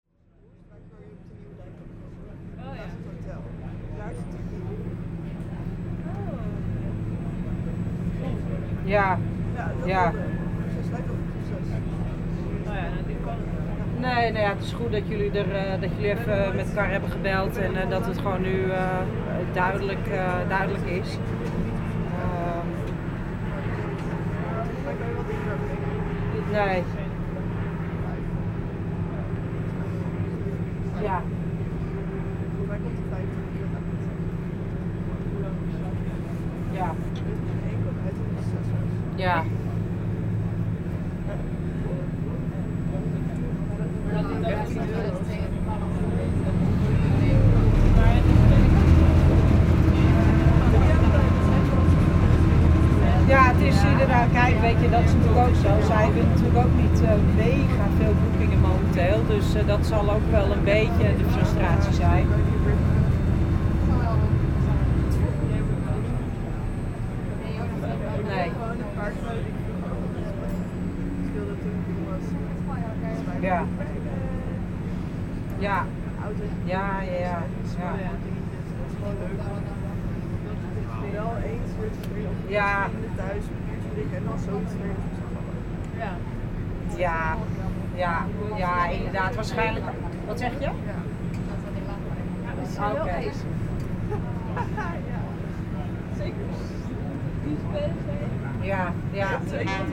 Amsterdam, Nederlands - Veer Buiksloterweg ferry
Het Ij, Veer Buiksloterweg. Crossing the river using the ferry. A person is phoning just near, with a strong voice.